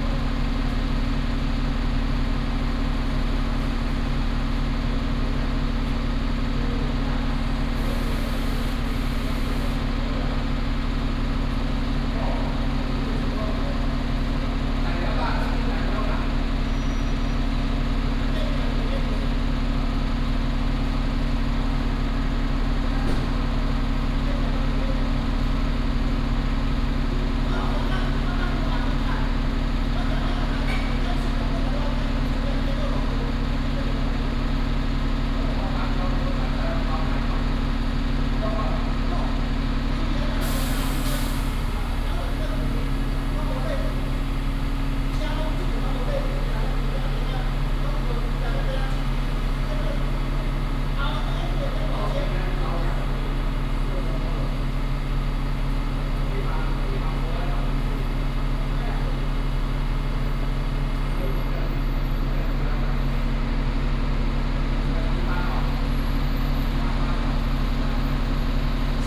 嘉義客運北港站, Beigang Township - Old bus terminal
Old bus terminal, traffic sound
Binaural recordings, Sony PCM D100+ Soundman OKM II
Yunlin County, Taiwan